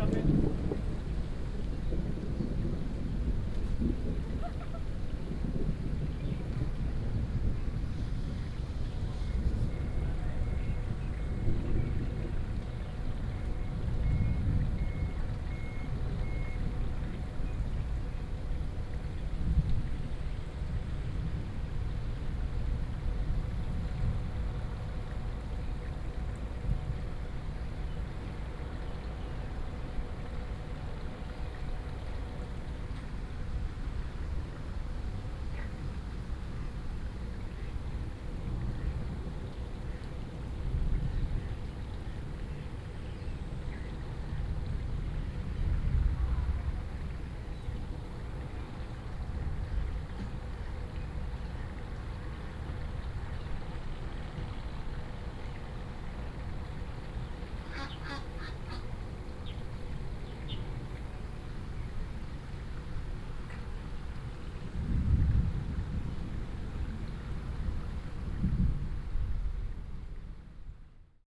八德埤塘自然生態公園, Taoyuan City - in the Park
in the Park, Thunder, Traffic sound, Tourists
Bade District, Taoyuan City, Taiwan, 4 July 2017